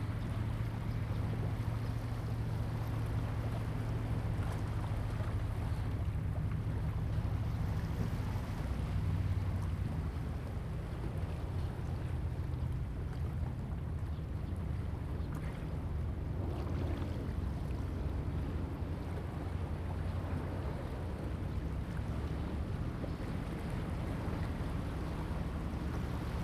尖山海濱公園, Huxi Township - In the bank
In the bank, Sound of the waves
Zoom H2n MS +XY